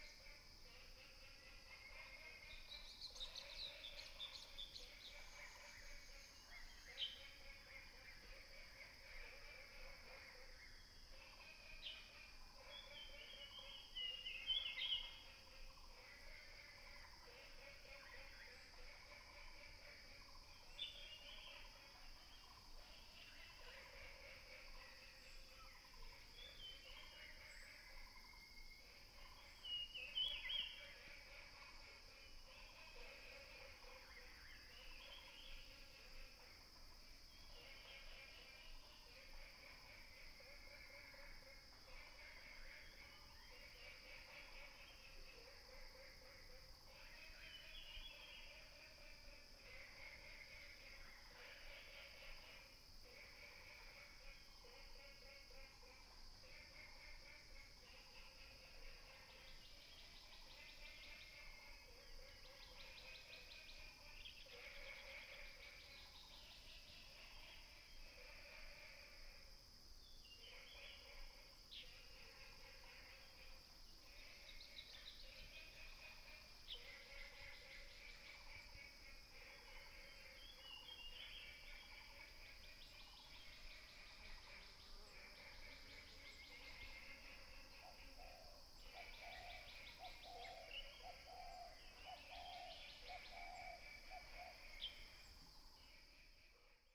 蓮華池藥用植物標本園, 魚池鄉五城村 - For wetlands
Birds singing, For wetlands, Frogs chirping